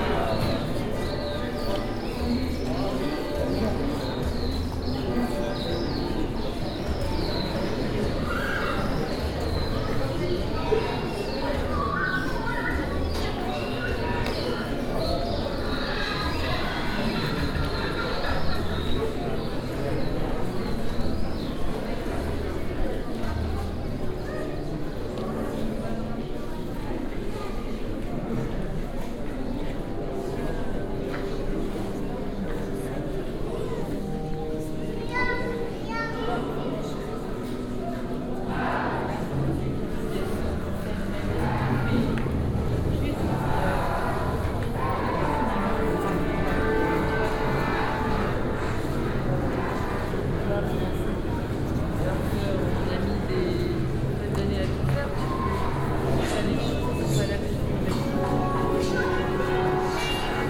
Château dAngers, Angers, France - (584 BI) Apocalypse Tapestry
Binaural recording of a walk-around Apocalypse Tapestry exhibition at Château d'Angers.
Recorded with Soundman OKM on Sony PCM D100
France métropolitaine, France